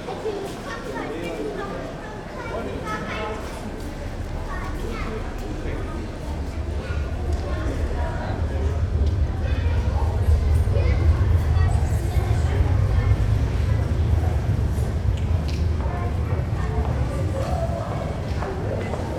hamburg dammtor - bahnhof, eingangshalle / station, entry hall
station hamburg dammtor, entry hall, early evening, busy people